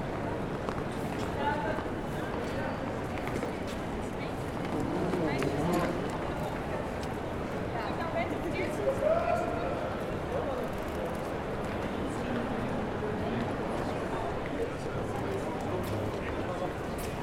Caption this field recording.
Atmosphere in the main station hall of Utrecht. Steps, suitcases, voices, anouncements of the international train to Düsseldorf. Recorded with DR-44WL.